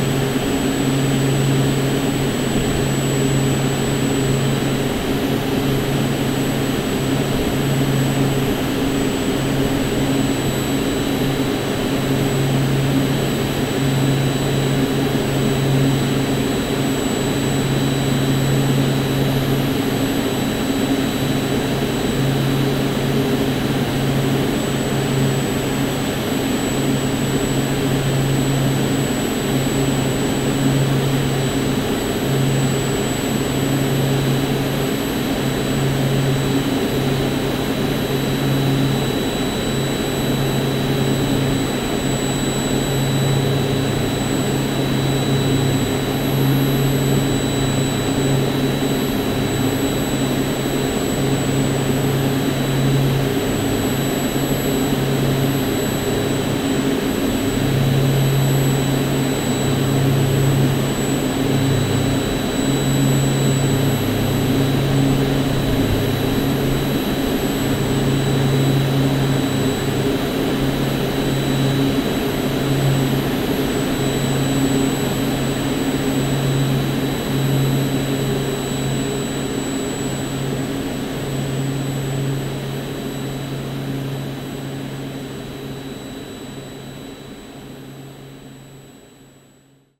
While walking, I made a stop near a wind turbine. This recording is the strong and unpleasant noise inside the wind turbine column.
La Bruyère, Belgium